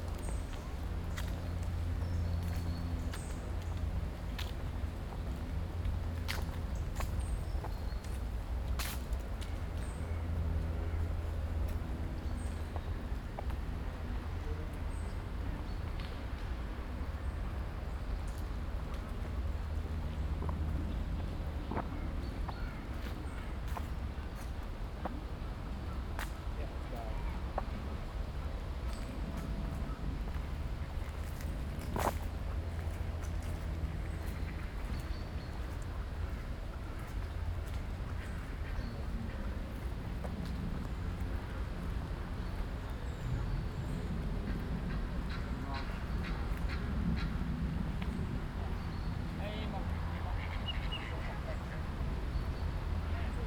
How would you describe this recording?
A beautiful park with lots of water and its inhabitants in Arnhem.